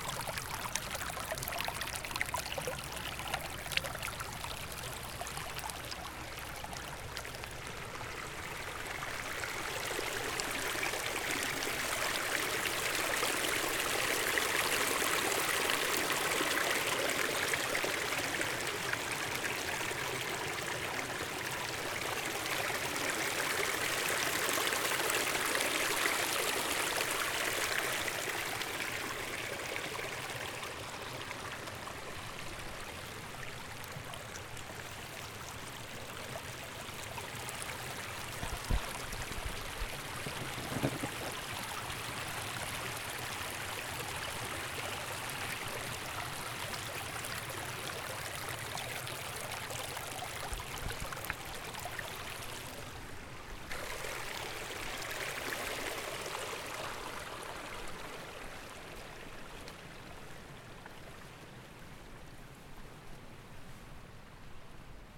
Helikoptertransport auf der Lauchneralp
Bach und vor allem Helikopter mit Baumaterial auf der Alp, Wetter durchzogen, nicht so heiss wird es heute, Gewitter sind möglich.